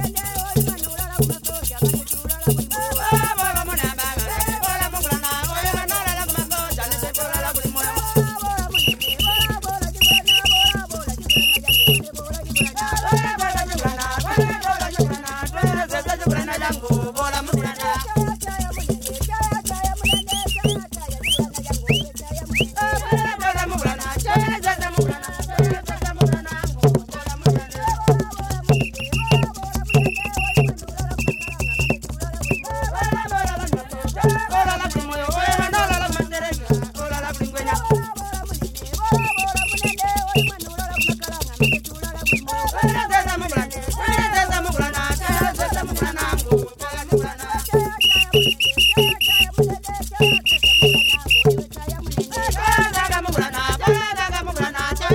Kariyangwe, Binga, Zimbabwe - Playing Chilimba....
Regina Munkuli, community based facilitator of Zubo Trust made this recordings with her friends.
the recordings are from the radio project "Women documenting women stories" with Zubo Trust.
Zubo Trust is a women’s organization in Binga Zimbabwe bringing women together for self-empowerment.